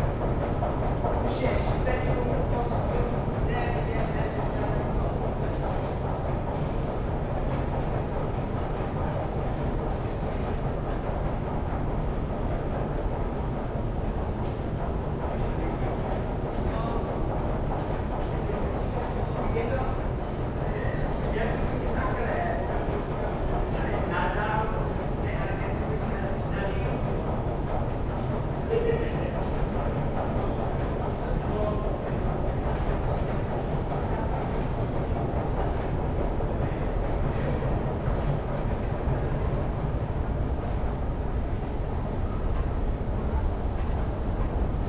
Praha, Mustek, subway
Mustek subway station, escalator ride from lowest to street level
21 June, 22:20